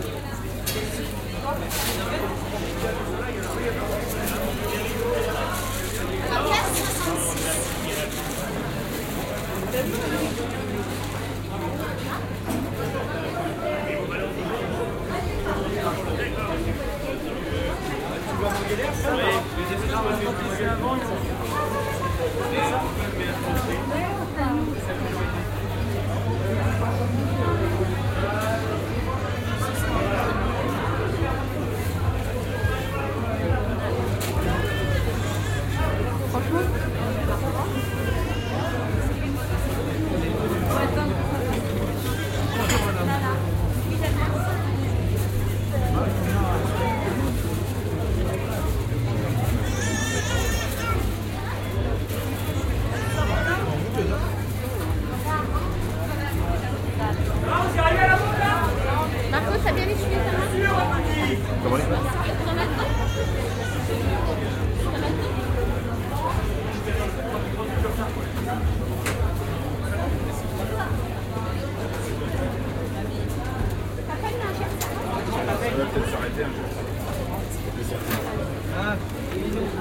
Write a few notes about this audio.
Walk on the Market next to Grande Notre Dame, first outdoor, than indoor, binaural recording.